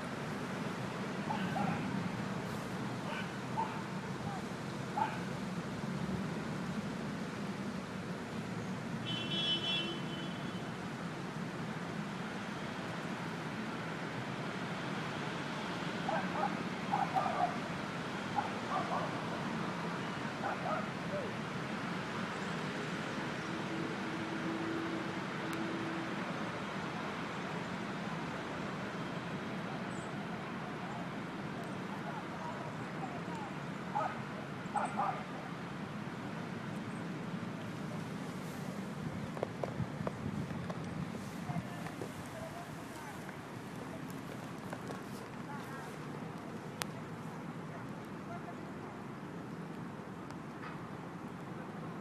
{"title": "Viale di San Paolo, 16", "date": "2011-03-07 10:21:00", "description": "San Paola public space", "latitude": "41.86", "longitude": "12.48", "altitude": "17", "timezone": "Europe/Rome"}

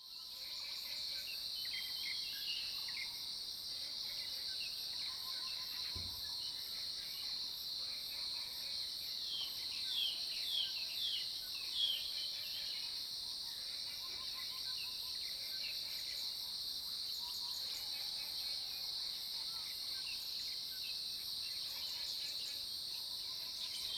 {"title": "Zhonggua Rd., 桃米里 Puli Township - Early morning", "date": "2015-06-12 05:25:00", "description": "In the morning, Bird calls, Crowing sounds, Cicadas cry\nZoom H2n MS+XY", "latitude": "23.94", "longitude": "120.92", "altitude": "503", "timezone": "Asia/Taipei"}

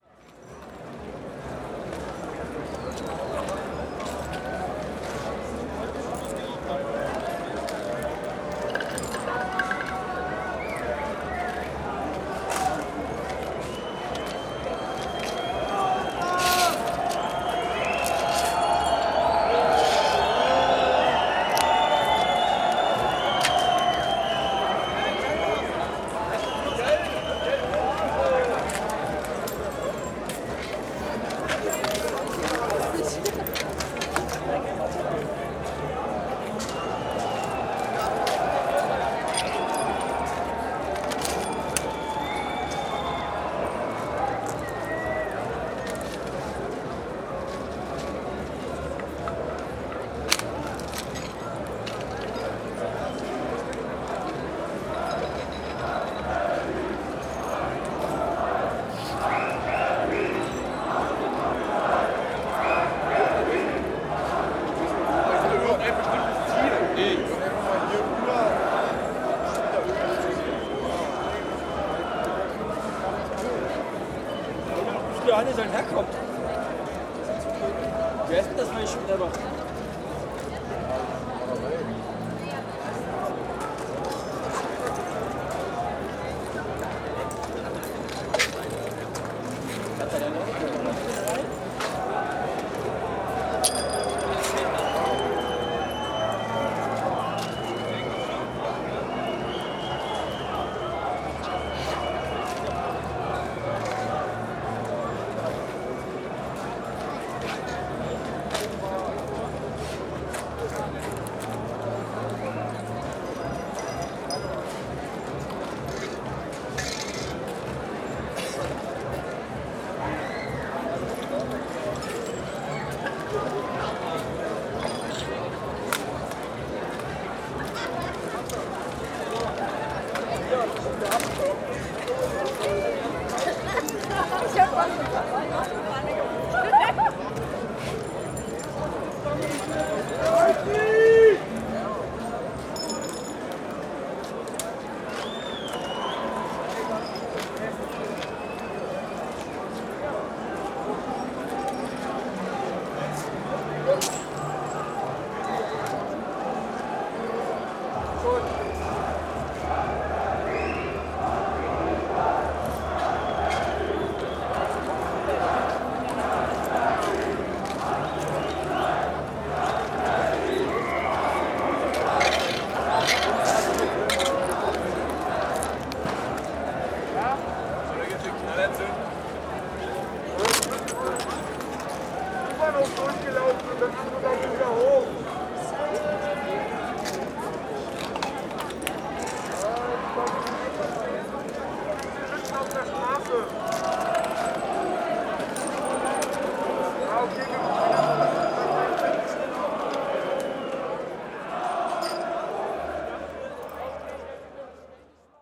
{"title": "berlin, skalitzer straße: 1st may soundwalk (7) - the city, the country & me: 1st may soundwalk (7)", "date": "2011-05-01 23:54:00", "description": "1st may soundwalk with udo noll\nthe city, the country & me: may 1, 2011", "latitude": "52.50", "longitude": "13.42", "altitude": "39", "timezone": "Europe/Berlin"}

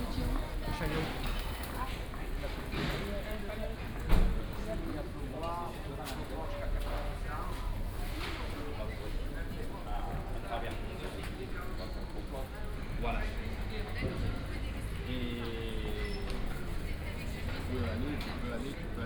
{"title": "place Richelme, Aix-en-Provence, Fr. - market ambience", "date": "2014-01-09 08:40:00", "description": "Place Richelme, food market setup, walk around the market\n(Sony PCM D50, OKM2)", "latitude": "43.53", "longitude": "5.45", "altitude": "207", "timezone": "Europe/Paris"}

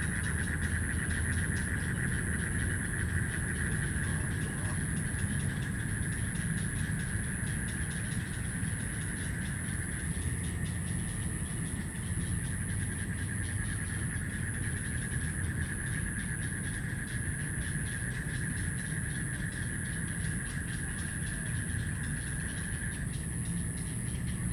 {"title": "大安森林公園, Taipei City, Taiwan - Frogs chirping", "date": "2015-06-26 22:13:00", "description": "in the Park, Bird calls, Frogs chirping\nZoom H2n MS+XY", "latitude": "25.03", "longitude": "121.53", "altitude": "8", "timezone": "Asia/Taipei"}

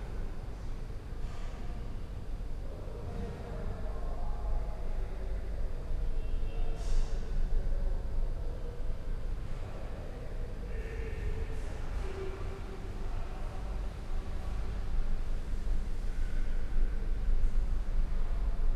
{"title": "Praha, FAMU, stairway hall", "date": "2011-06-22 13:25:00", "description": "big strairway at FAMU film school, 3rd floor", "latitude": "50.08", "longitude": "14.41", "altitude": "198", "timezone": "Europe/Prague"}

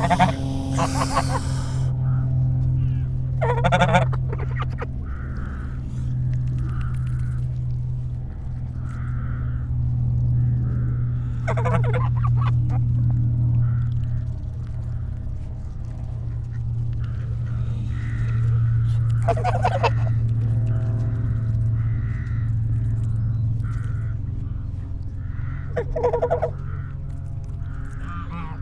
{"title": "Ducks and a Plane - Golden Valley, Malvern, UK", "date": "2020-11-04 14:00:00", "description": "I am sitting on a bench surrounded by geese and ducks so close they are treading on the mics sometimes. In front of me is a large lake and in the background a half a mile away sits the dark mass of the Malvern Hills. A plane wanders up, probably from Staverton Airfield not too far away. It practices a few manoeuvers and suddenly makes a sharp turn changing the engine note. The geese continue to beg for my lunch.", "latitude": "52.03", "longitude": "-2.33", "altitude": "58", "timezone": "Europe/London"}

